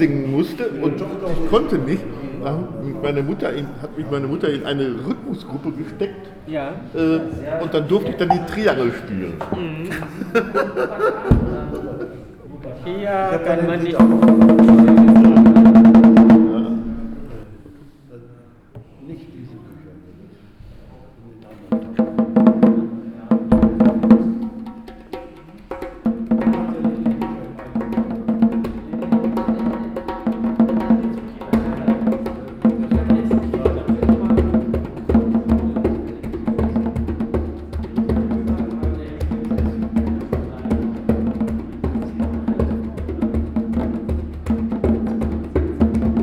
Heinrich Kleist Forum, VHS, Hamm, Germany - spontaneous after-event jam
We're in the large hall of the city library/ VHS building. It’s probably been the last event here before the second lock down begins on Monday… For the past 90 minutes we listened to Hermann Schulz's storytelling, a first reading from his manuscript for a yet to be published book. Joseph Mahame had accompanied Schulz’s journey with his musical stories and sounds. While Herrmann Schulz is still talking with interested listeners, a spontaneous jam session unfolds…
find the recordings of the event archived here: